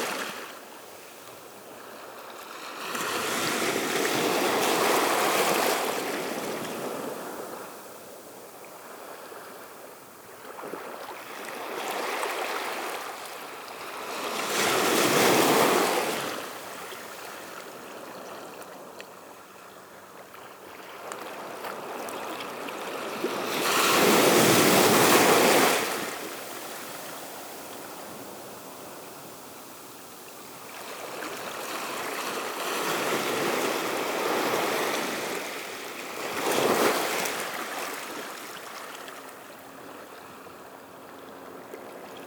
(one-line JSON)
{"title": "Ars-en-Ré, France - The sea on a jetty", "date": "2018-05-22 10:00:00", "description": "On a jetty, the beautiful waves during a time when the sea is going slowly to low tide.", "latitude": "46.19", "longitude": "-1.51", "timezone": "Europe/Paris"}